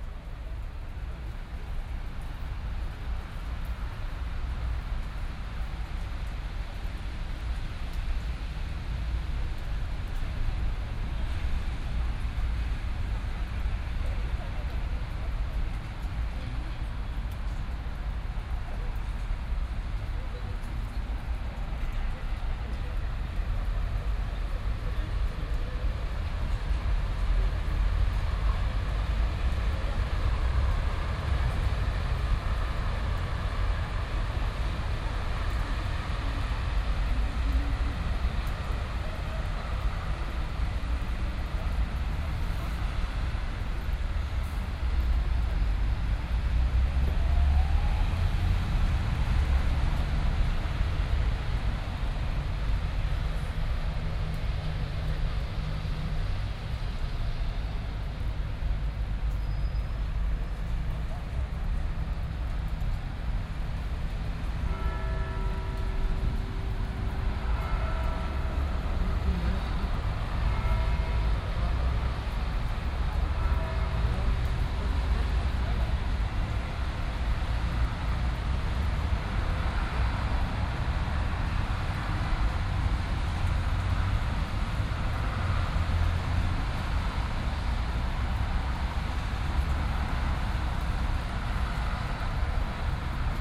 Löhrrondell, square, Koblenz, Deutschland - Löhrrondell 6
Binaural recording of the square. Sixth of several recordings to describe the square acoustically. Rainy street, shop window talk.